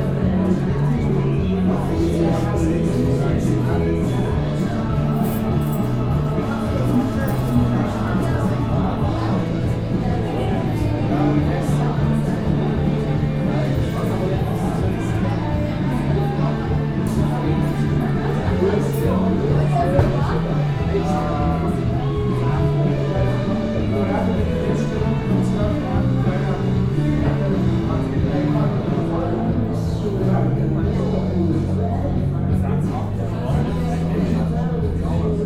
Zürich West, Schweiz - Big Ben Pub Westside
Big Ben Pub Westside, Hardstr. 234, 8005 Zürich
Zürich, Switzerland